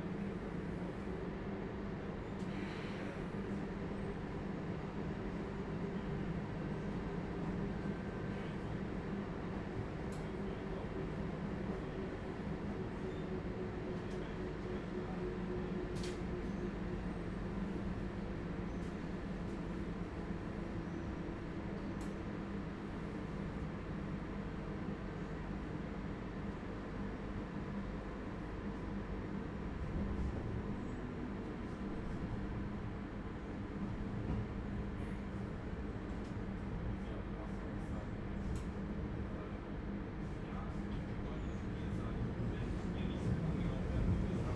Altstadt-Süd, Köln, Deutschland - Wir haben genug gestanden heute
Riding home in the tram in the evening after a visit to the pub with colleagues.
1 March 2012, ~23:00